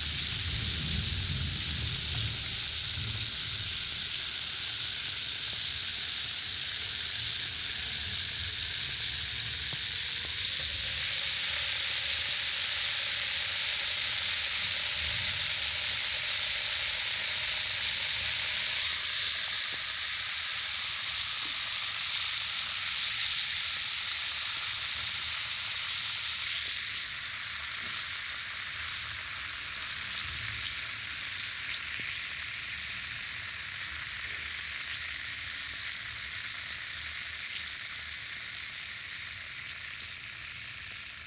Kelbourne St, Glasgow, UK - Dissolving Multivitamins
Recorded with a MixPre-3 and a pair of DPA 4060s
9 February 2020, 12:35